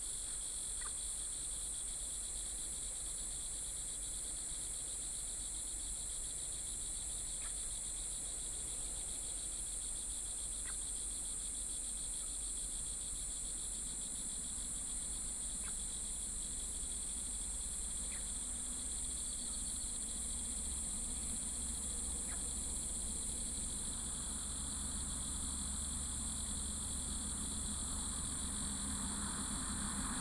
貢寮區福隆村, New Taipei City - Night road
Night road, Traffic Sound, Cicadas
New Taipei City, Taiwan, 29 July 2014, 18:50